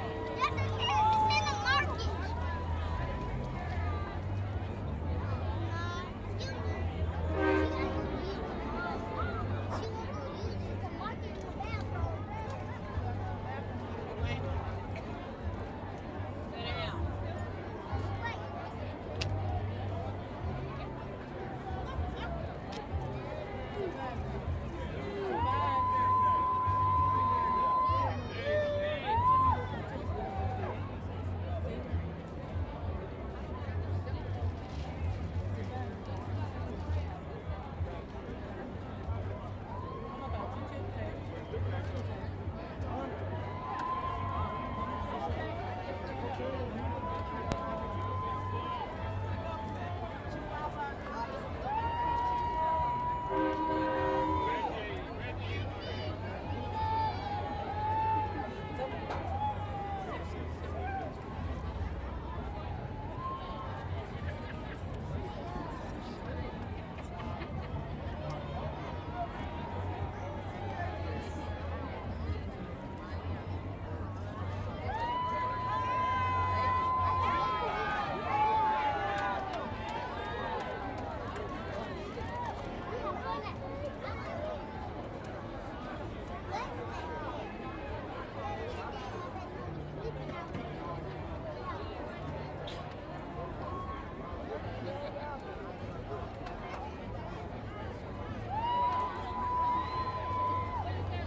South Los Angeles, Los Angeles, Kalifornien, USA - martin luther king memorial parade
los angeles - martin luther king memorial parade at crenshaw / martin luther king jr, music and sounds from passing floats, yelling spectators, aound 12:30pm
CA, USA